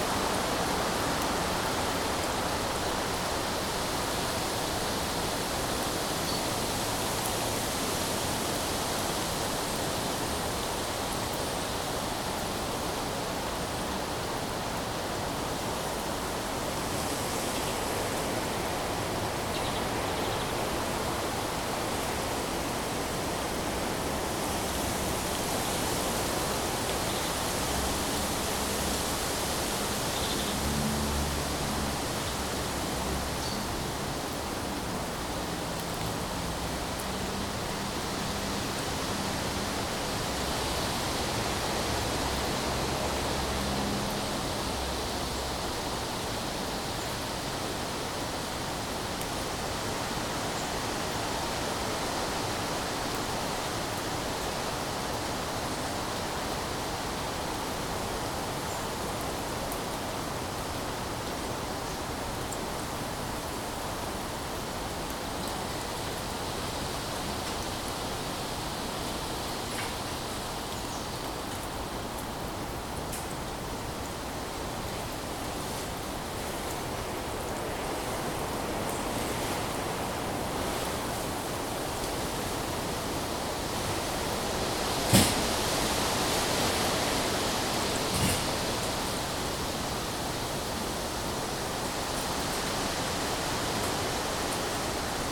The wind in the trees. Birds busy. Definitively more than two, maybe four, more?
The calmness. The waves of winds coming and going. It´s not warm, but neither cold. Good I have a jacket on. That tree in the middle, why has it that leaning pole? The common fields behind. Children must love it here. But not today. There´s a plane in the sky. With people. Where do they come from? Tourists, going to the high mountains? Focused listening, global listening. I lose my focus often. It is calm and safe here. More warm inside of course, but I will come back there soon. Good with the air here in Jämtland. Breathing the air from the mountains. Good. Keep doing it. Listening. Recording. Now waves again. Am I at the sea? The crow reminds me not. But definitely calm waves. Of wind. In the trees.